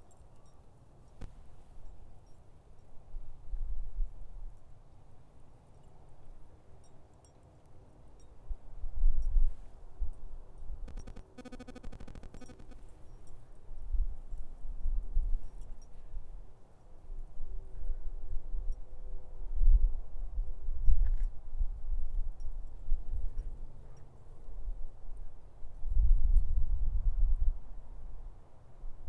Gunbarrel, Boulder, CO, USA - Night Dog Walk
Walking the dog in the park at night.
30 January